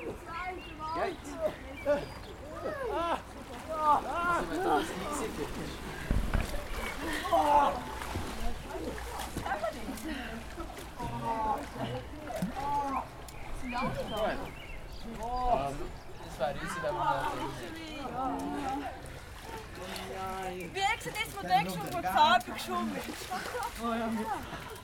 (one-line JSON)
{"title": "Aareschwimmer.innen Ausstieg im Marzilibad", "date": "2011-06-10 15:34:00", "description": "Aareschwimmer.innen, Ausstieg aus dem Fluss im Marzilibad, Temperatur der Aare 16 Grad, Aussentemperatur 21 Grad, Es ist so kalt, dass die Arme und Beine nicht mehr spürbar sind", "latitude": "46.94", "longitude": "7.45", "altitude": "504", "timezone": "Europe/Zurich"}